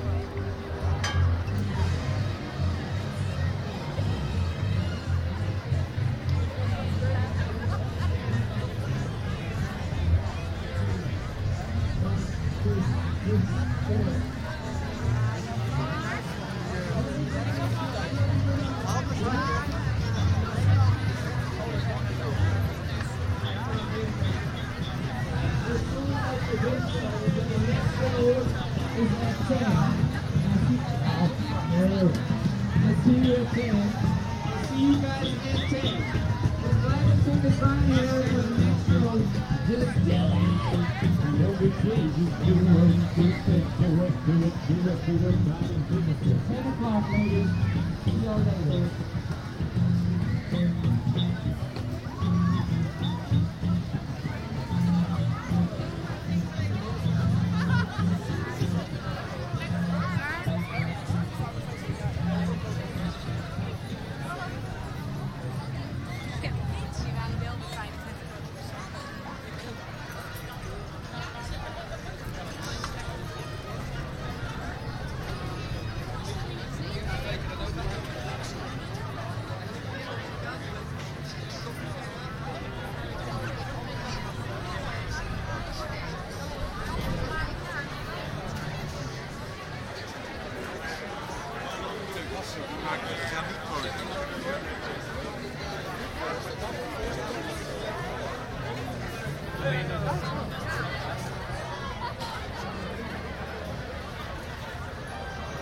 Zoom H2 recorder with SP-TFB-2 binaural microphones.
The Hague, Netherlands, 7 July 2011